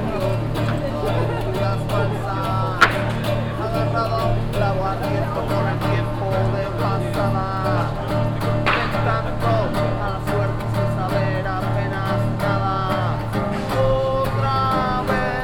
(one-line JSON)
{"title": "essen, kettwiger street, street musician", "date": "2011-06-08 23:36:00", "description": "Sitting in the shopping zone a street musician playing guitar and starting expressively to sing.\nProjekt - Klangpromenade Essen - topographic field recordings and social ambiences", "latitude": "51.46", "longitude": "7.01", "altitude": "86", "timezone": "Europe/Berlin"}